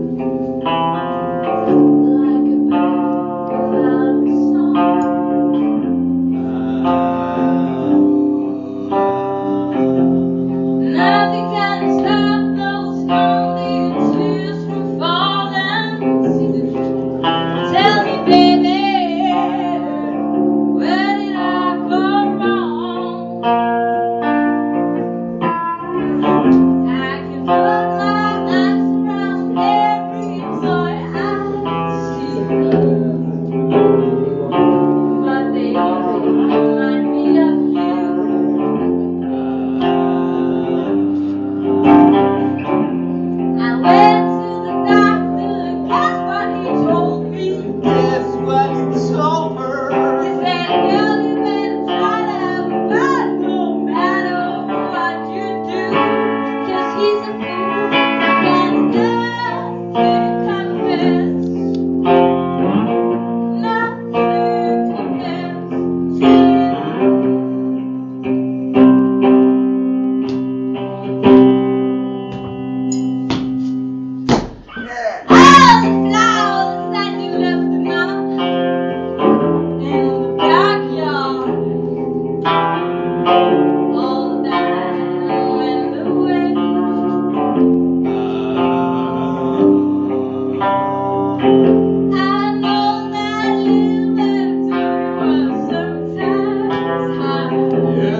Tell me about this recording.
As a company to the exhibition of Fred Martin, the fabulous CALL ME UP! are playing their most beloved evergreens. The neighbours don't like it. We do!!!